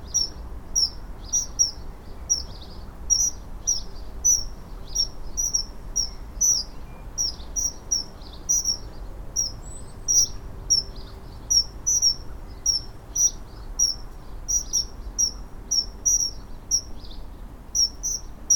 A walnut tree, Katesgrove, Reading, Reading, UK - Sparrow in the Walnut tree
This year there are many sparrows nesting in the roof and in the garden. Their insistent call has really defined the texture of this spring and summer, a constant sonic presence in the garden. They especially like to sing in a nearby walnut tree but also in the tree which is next to this in the neighbouring garden. I strapped my recorder into the tree one fine afternoon to document these special sounds. You can also hear the red kites and the crows that live in our neighbourhood. I really love these sounds as a kind of foreground for the background sounds of where we live - the vague and omnipresent traffic bass; the deep blurry presence of planes in the sky; and the soughing of the wind through all the close together suburban gardens... you can hear blackbirds too. Sorry it's a bit peaky in places... the sparrow got quite close to the recorder I think. Maybe he wants to be a rockstar of aporee.